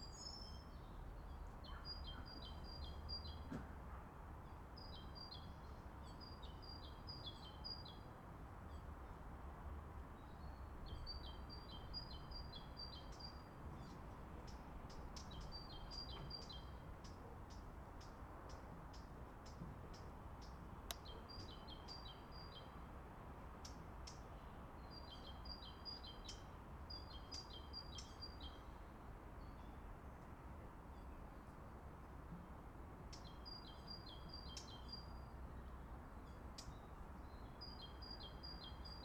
marseille, au calme
sur les hauteurs de marseille lors du tournage de vieille canaille